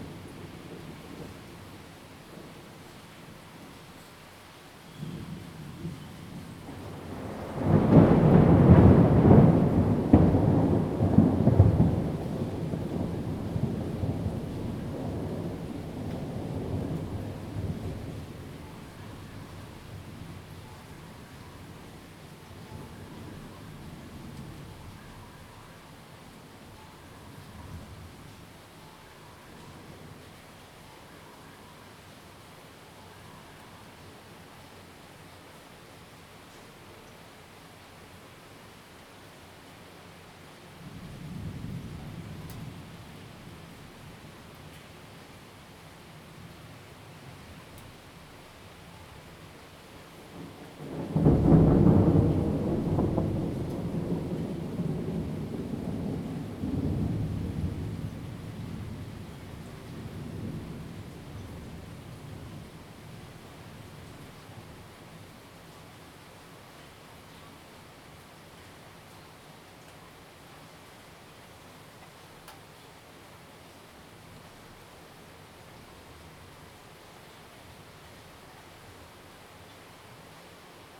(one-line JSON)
{"title": "Rende 2nd Rd., Bade Dist. - Thunderstorms", "date": "2017-08-27 17:53:00", "description": "Thunderstorms, wind, rain, Zoom H2n MS+XY", "latitude": "24.94", "longitude": "121.29", "altitude": "141", "timezone": "Asia/Taipei"}